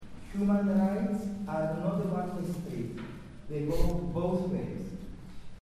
human rights
LIMINAL ZONES WORKSHOP, CYPRUS, Nikosia, 5-7 Nov 2008, Constantis Candoudas at his lecture "the Orams Case"